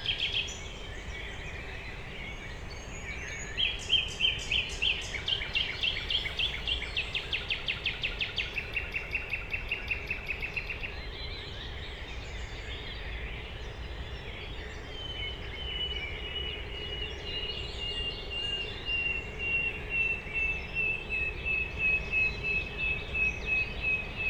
Berlin, Friedhof Columbiadamm - dawn chorus
Berlin, cemetery Friedhof Columbiadamm, before sunrise, dawn chorus day, nighingale and other birds
singing
(SD702, Audio Technica BP4025)